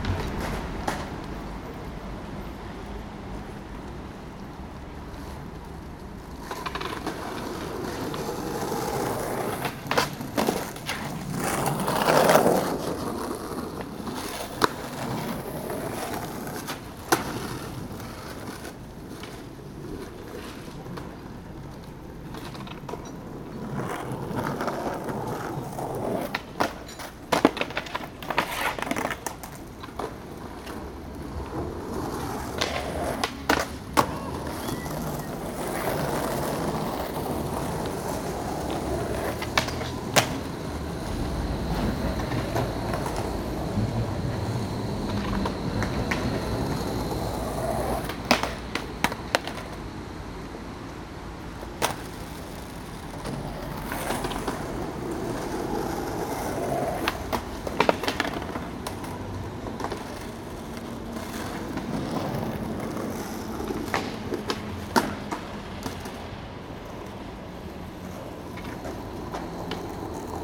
Skaters on Warschauerstr
skaters practicing tricks on the pavement outside Rewe on Warschauerstr near Revalerstr, recorded with a Tascam Dr-100 mk3, wind protection, uni mic.
Berlin, Germany, 18 July 2017